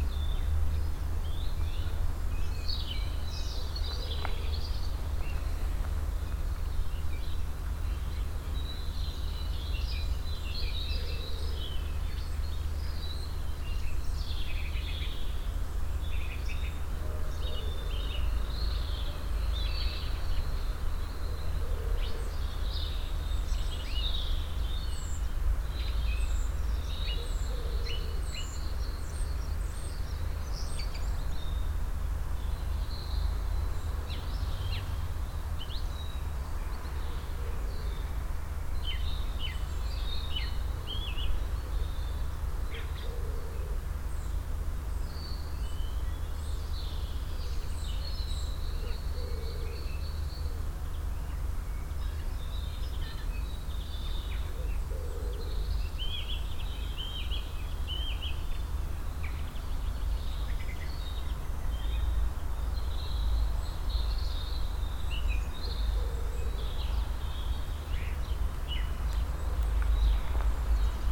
{"title": "unna, breitenbach areal, spring morning", "description": "a warm spring morning - vivid birds in the trees and bushes of the small private gardens near the factory halls, steps on the stony passway\nsoundmap nrw - social ambiences and topographic field recordings", "latitude": "51.54", "longitude": "7.70", "altitude": "103", "timezone": "Europe/Berlin"}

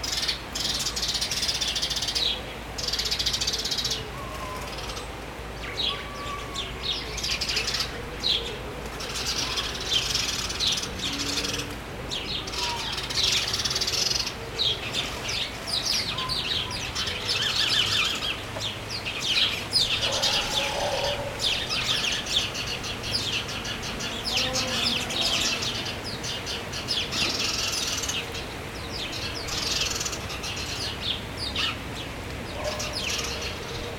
{"title": "Chastre, Belgique - Sparrows", "date": "2016-08-14 20:00:00", "description": "Sparrows fights in the very quiet village of Villeroux, and the village gentle ambiance a summer evening.", "latitude": "50.60", "longitude": "4.61", "altitude": "123", "timezone": "Europe/Brussels"}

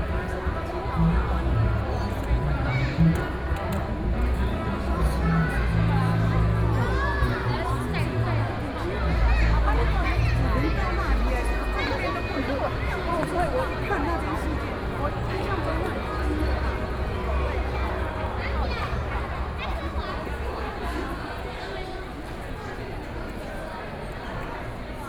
{"title": "National Concert Hall - The plaza at night", "date": "2013-08-16 19:22:00", "description": "The plaza at night, People coming and going, The distant sound of jazz music, Sony PCM D50 + Soundman OKM II", "latitude": "25.04", "longitude": "121.52", "altitude": "12", "timezone": "Asia/Taipei"}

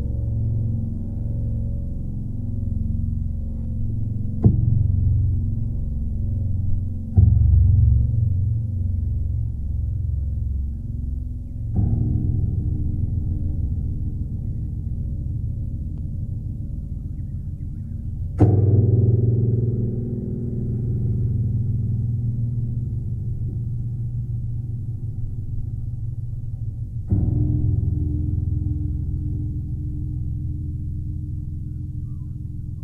{"title": "Mt Tennant, ACT: Fencing contractor (fences plucked and recorded)", "latitude": "-35.55", "longitude": "149.07", "altitude": "640", "timezone": "GMT+1"}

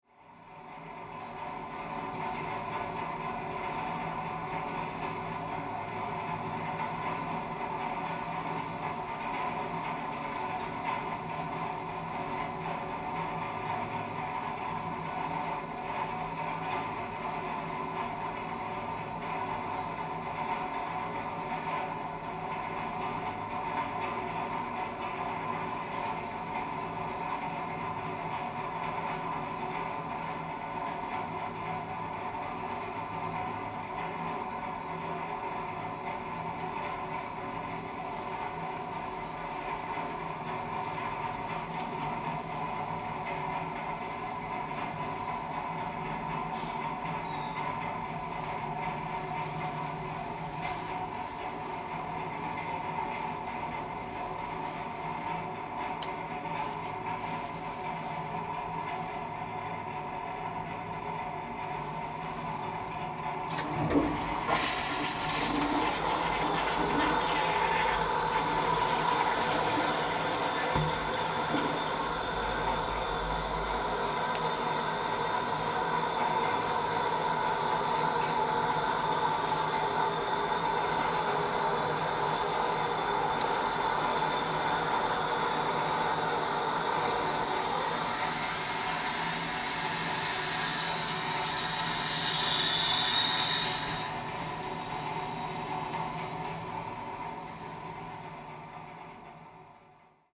{"title": "Jinsha Township, Kinmen County - Toilets", "date": "2014-11-03 13:22:00", "description": "Toilets, Pumping motor noise\nZoom H2n MS+XY", "latitude": "24.50", "longitude": "118.44", "altitude": "17", "timezone": "Asia/Taipei"}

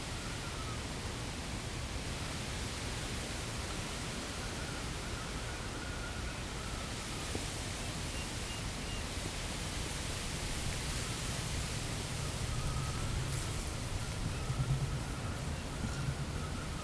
{"title": "Ulriks, Copenhagen, Denmark - Wind on groove of reeds", "date": "2012-07-09 12:50:00", "description": "Zoom h2n placed in a groove of reeds, close to a small wooden jetty in front of Frederiks Bastions, Copenhagen. Strong wind, windjammer.", "latitude": "55.68", "longitude": "12.61", "altitude": "6", "timezone": "Europe/Copenhagen"}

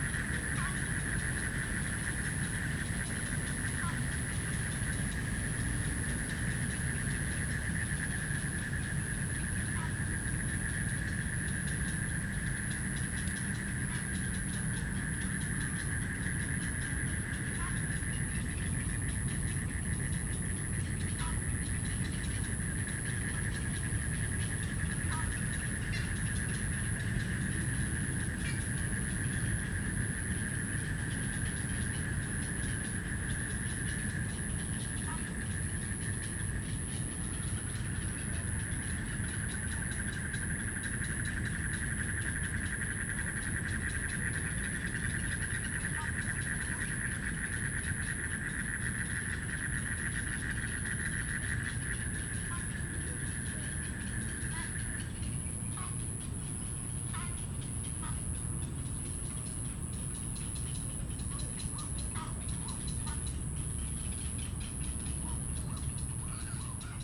{"title": "大安森林公園, Taipei City, Taiwan - Frogs chirping", "date": "2015-06-26 22:13:00", "description": "in the Park, Bird calls, Frogs chirping\nZoom H2n MS+XY", "latitude": "25.03", "longitude": "121.53", "altitude": "8", "timezone": "Asia/Taipei"}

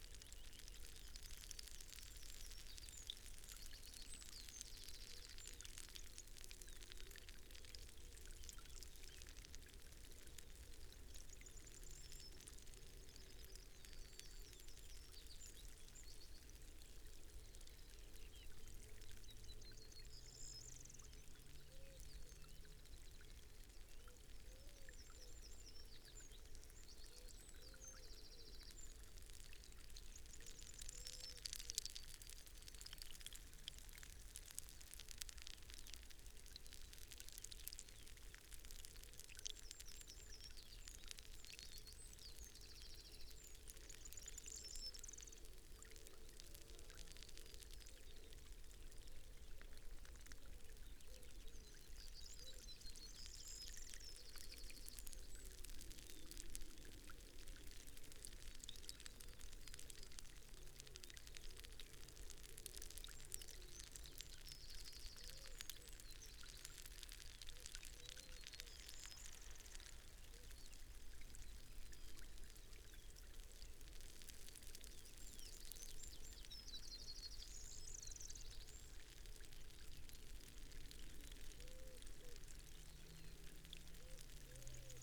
{"title": "Green Ln, Malton, UK - rivulet down a country road ...", "date": "2022-07-09 06:24:00", "description": "rivulet down a country road ... an irrigation system hooked up to a bore hole had blown a connection ... this sent a stream of water down the track and pathways ... the stream moved small pebbles and debris down the side of the road ... recorded with dpa 4060s in a parabolic to mixpre3 ... bird calls ... song ... blackbird ... skylark ... yellowhammer ... wren ... corn bunting ... linnet ...", "latitude": "54.12", "longitude": "-0.56", "altitude": "93", "timezone": "Europe/London"}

